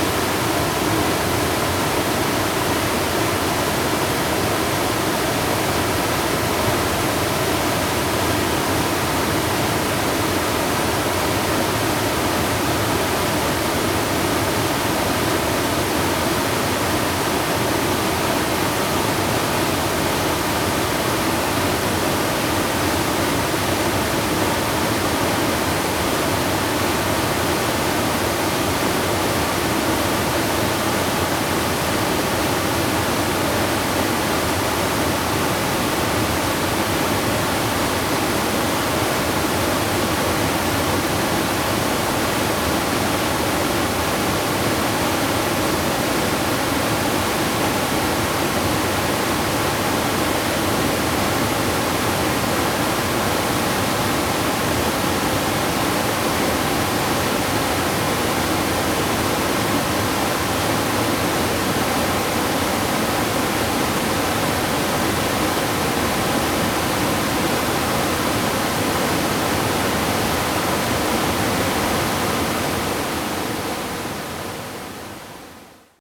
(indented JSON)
{
  "title": "夢谷瀑布, 仁愛鄉南豐村, Nantou County - waterfalls",
  "date": "2016-12-13 10:26:00",
  "description": "Waterfalls\nZoom H2n MS+XY +Sptial Audio",
  "latitude": "24.02",
  "longitude": "121.09",
  "altitude": "831",
  "timezone": "GMT+1"
}